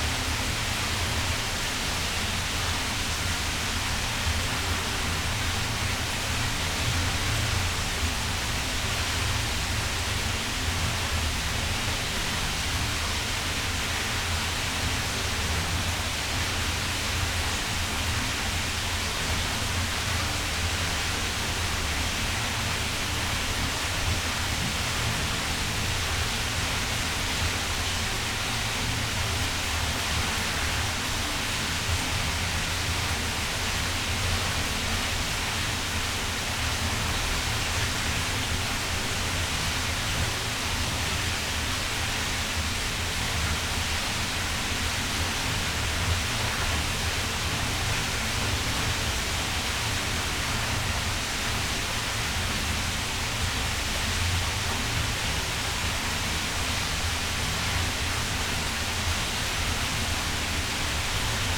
water flows into the river Hunte, which is rather a heavily used canal, it appears dirty. Some melodic pattern coming from the metal tube.
(Sony PCM D50, Primo EM172)